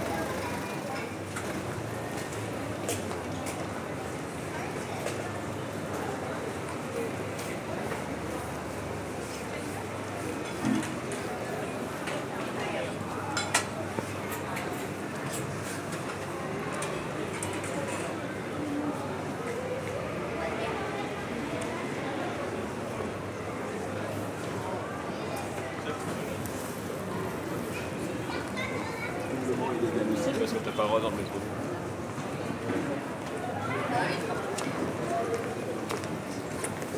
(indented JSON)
{
  "title": "Paris, France - The restaurants street",
  "date": "2019-01-02 17:00:00",
  "description": "Traveling through Paris, we made a walk into the Huchette street. It's full of tourists. Every restaurant is a tourist trap. Trafic noise into the rue Saint-Jacques, tourists talking into the rue de la Huchette, some scammers saying they are the best restaurant and at the end, trafic noise of the Boulevard Saint-Michel.",
  "latitude": "48.85",
  "longitude": "2.35",
  "altitude": "35",
  "timezone": "GMT+1"
}